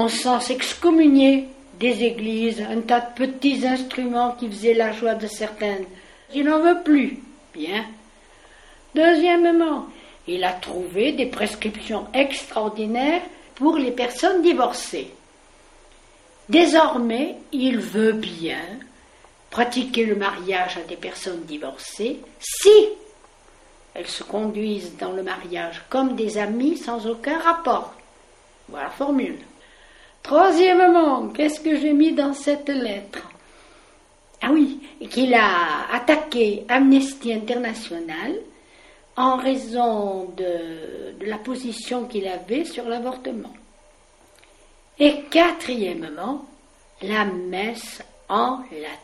à propos de mimi jrm